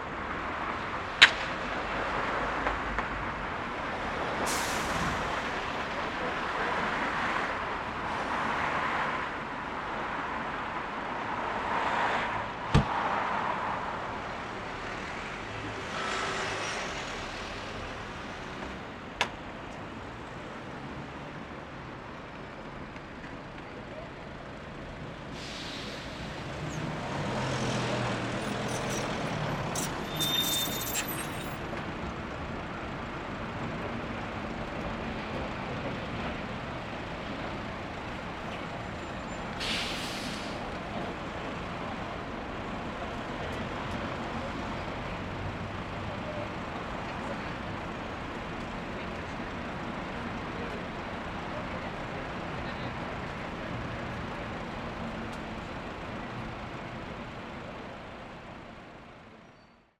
Donegall Square N, Belfast, UK - Belfast City Hall
Recording of locals and visitors passing at the junction that intertwines city centre, daily shopping, and commuters. This is a day before Lockdown 2 in Belfast.
Northern Ireland, United Kingdom, 15 October